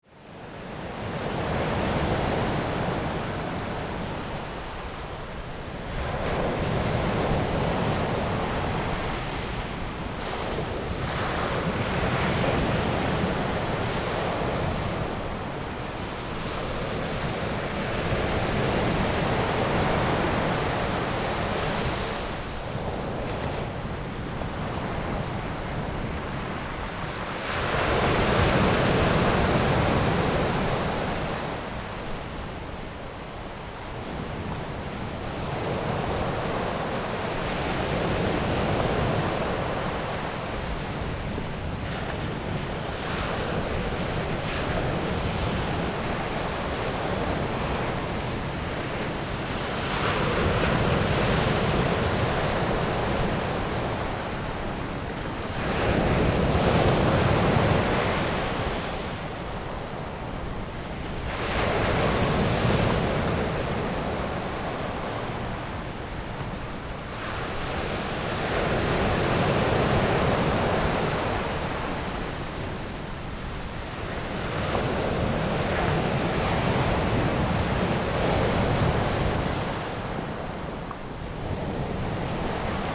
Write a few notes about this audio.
Sound of the waves, Zoom H6 XY + Rode NT4